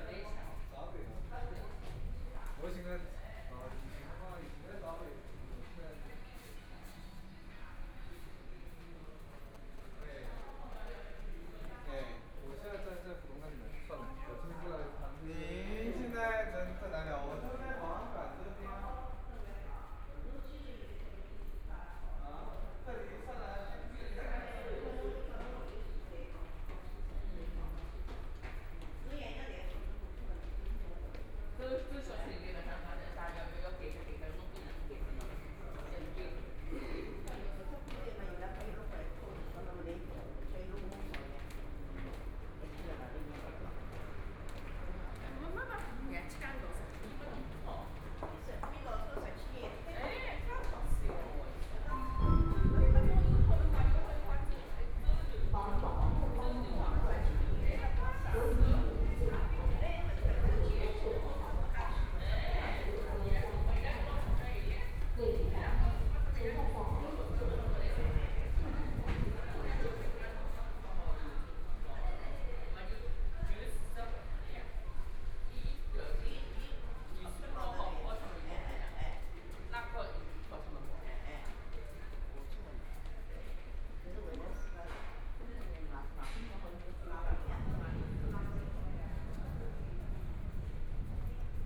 Dongchang Road station, Shanghai - Walking in the subway station
Walking in the subway station, Subway station broadcast messages, Walking in underground passage, Binaural recording, Zoom H6+ Soundman OKM II
Pudong, Shanghai, China, 21 November 2013